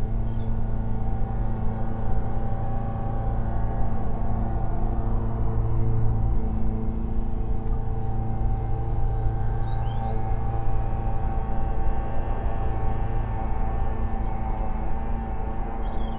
Behoes Ln, Reading, UK - Behoes Lane Meditation
Meditation on Behoes Lane in Woodcote looking out over the Thames and Moulsford with Didcot power station in the distance. The shifting drone of a lawn mower is prominent throughout most of the recording punctuated in the foreground with birds and the scurrying of two rats that were intrigued by my presence. Recorded on a Sound Devices 788T with a pair of Sennheiser 8020s either side of a Jecklin Disk.